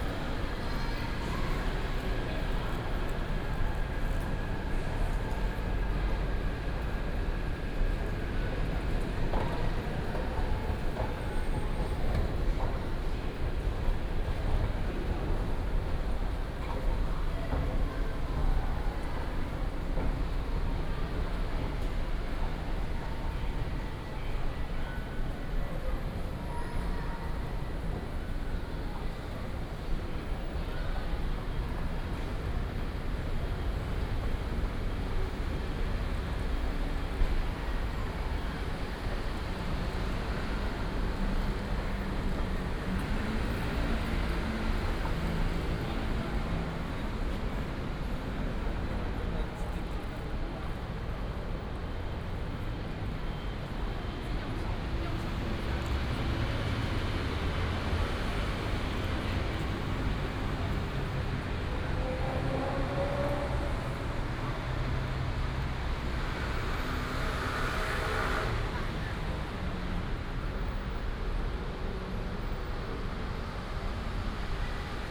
Beitou Station, Taipei City - Walking at the station
Walking at the station, traffic sound, From the station hall to the platform
Beitou District, Taipei City, Taiwan